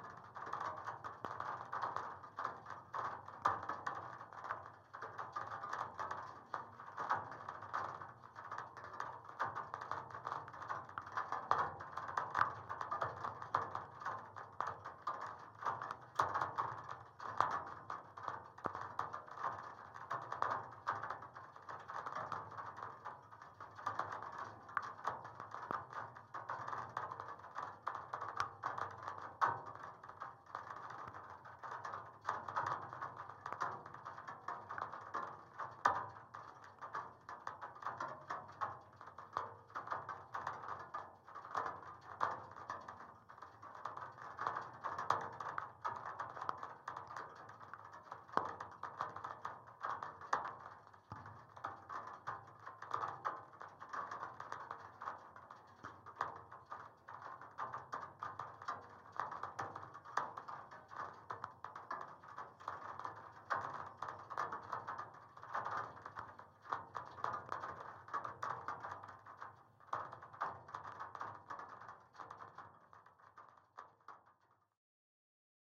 Utena, Lithuania, rain on a wire
rain on a single wire captured with contact microphones